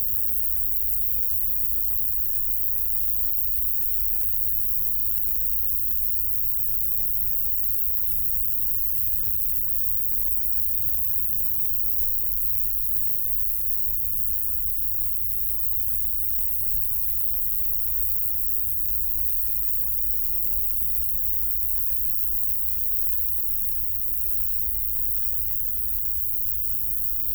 Tempelhofer Feld, Berlin, Deutschland - intense crickets in high grass
hot summer morning at former Tempelhof airfield, intense cricket sounds in the high grass, microphone close to the ground. deep drones are audible too.
(Sony PCM D50, DPA4060)